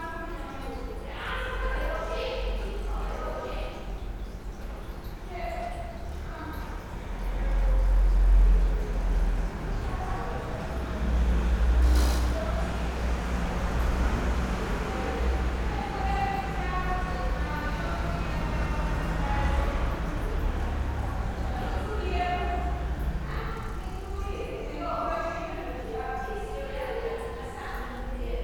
milazzo, via del sole - sunday morning street
sunday morning, rainy, cold, autumn, sounds on the street, recorded from the 1st floor